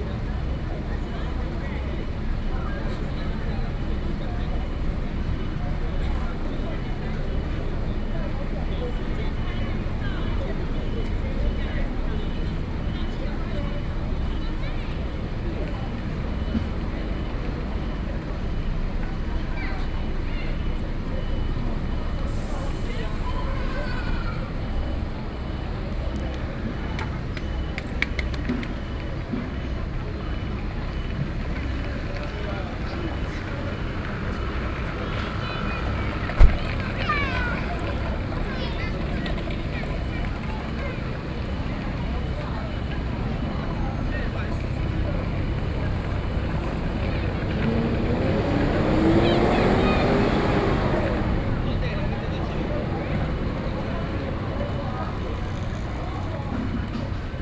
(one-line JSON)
{"title": "Ruifang Station, New Taipei City - Square", "date": "2012-11-13 18:45:00", "latitude": "25.11", "longitude": "121.81", "altitude": "63", "timezone": "Asia/Taipei"}